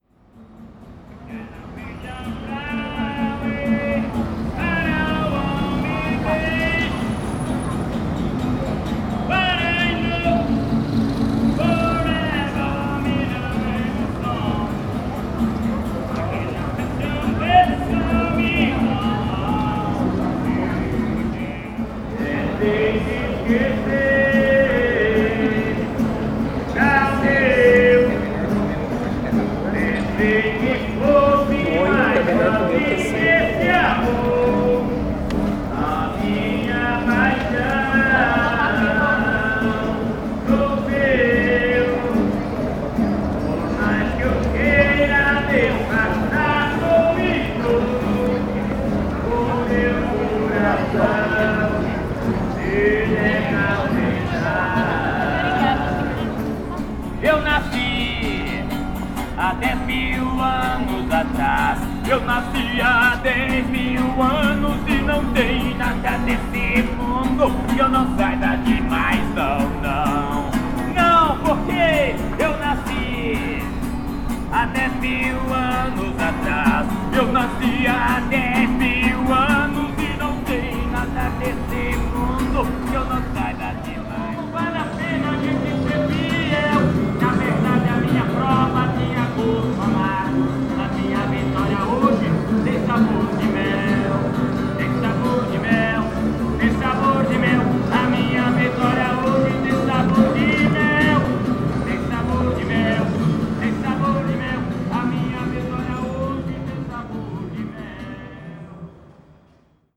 Calçadão de Londrina: Músico de rua: violonista (acústico) - Músico de rua: violonista (acústico) / Street musician: guitarist ( acoustic)
Panorama sonoro: trechos de apresentações em dias distintos de um músico de rua que catava e tocava as músicas “Skyline Pigeon” de Elton John, “Tentei te esquecer” de Cesar Menotti e Fabiano, “Eu nasci há 10 mil anos atrás” de Raul Seixas e “Minha vitória tem sabor de mel”, de Damares. Algumas pessoas davam atenção e contribuíam com algum dinheiro.
Sound panorama: Excerpts from performances on different days of a street musician who used to sing and play like Elton John's "Skyline Pigeon" songs, "Tentei te esquecer" by Cesar Menotti and Fabiano, "Eu nasci há 10 mil anos atrás" by Raul Seixas and " Minha vitória tem sabor de mel" by Damares. Some people gave attention and contributions with money.
26 August 2017, ~11:00